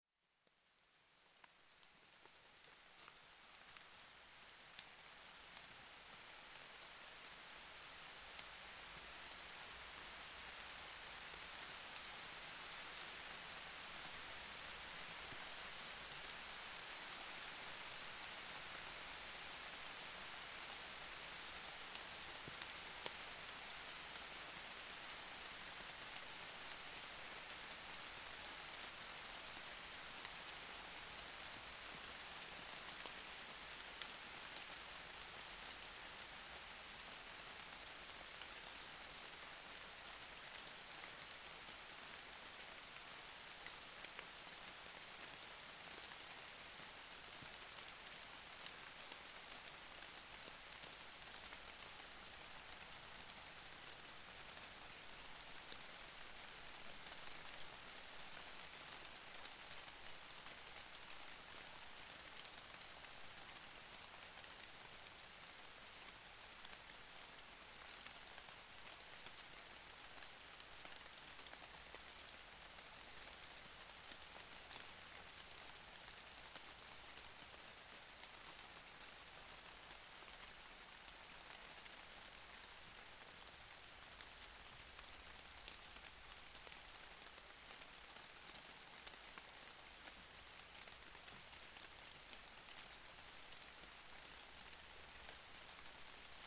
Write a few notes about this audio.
it's raining in so-called "Gallows" wood...